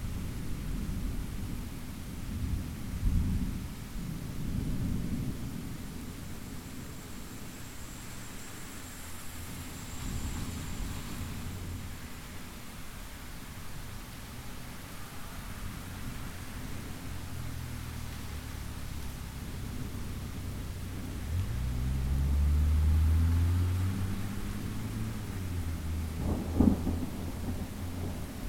Eastside, Milwaukee, WI, USA - thunderstorm
Rainy, windy, rolling thunder, much traffic on wet pavement.